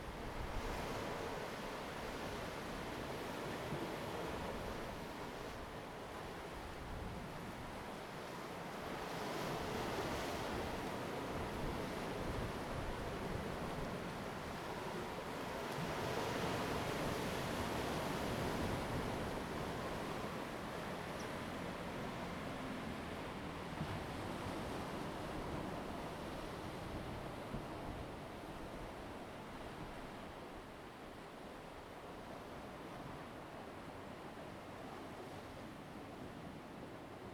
sound of the waves
Zoom H2n MS +XY
Taitung County, Taiwan